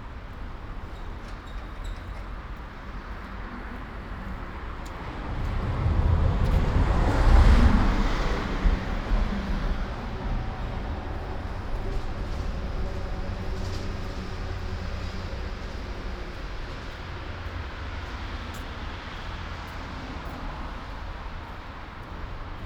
Ascolto il tuo cuore, città. I listen to your heart, city. Several chapters **SCROLL DOWN FOR ALL RECORDINGS** - It’s five o’clock with bells on Tuesday in the time of COVID19 Soundwalk
"It’s five o’clock with bells on Tuesday in the time of COVID19" Soundwalk
Chapter XLV of Ascolto il tuo cuore, città. I listen to your heart, city
Tuesday April 14th 2020. San Salvario district Turin, walking to Corso Vittorio Emanuele II and back, thirty five days after emergency disposition due to the epidemic of COVID19.
Start at 4:51 p.m. end at 5:18 p.m. duration of recording 27’02”
The entire path is associated with a synchronized GPS track recorded in the (kmz, kml, gpx) files downloadable here: